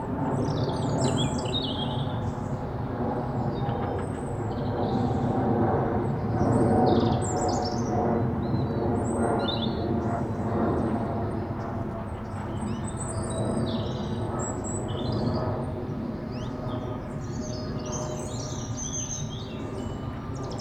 This lovely bit of land by the river will be turned into the East Reading Mass Rapid Transit (MRT) scheme. Sony M10 Rode VideoMicProX